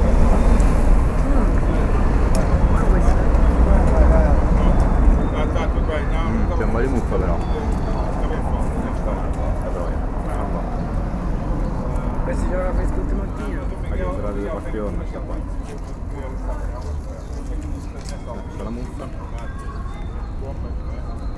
Villanova de Gaia, Porto, cafè
take a coffee
Vila Nova de Gaia, Portugal, 28 July 2010